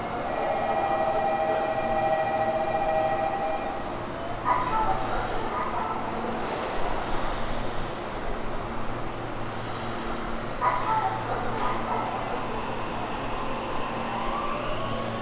Meitetsu Nagoya Station / 名鉄名古屋駅